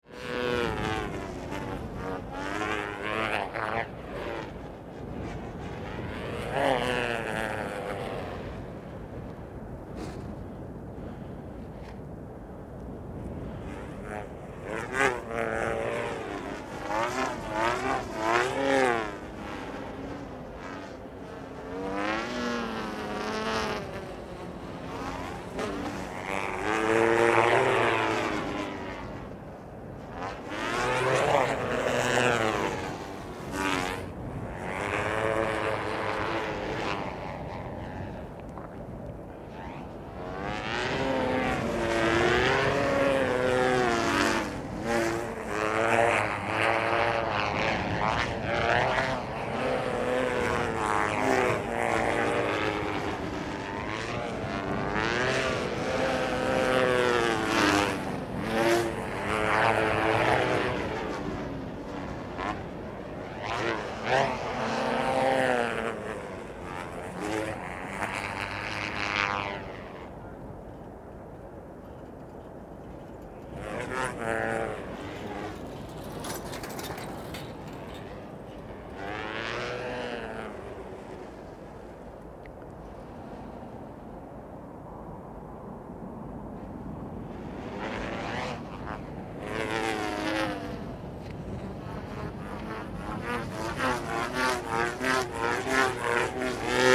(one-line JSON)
{"title": "Sychronous kite flying", "date": "2011-11-25 16:43:00", "description": "2 kite fliers practicing extremely precise synchronous flying on a windy day.", "latitude": "52.48", "longitude": "13.39", "altitude": "44", "timezone": "Europe/Berlin"}